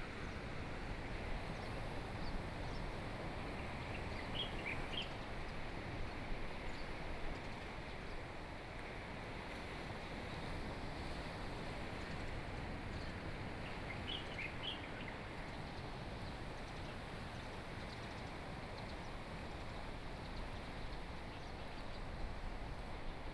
Waves, Birdsong
Binaural recordings
Sony PCM D100+ Soundman OKM II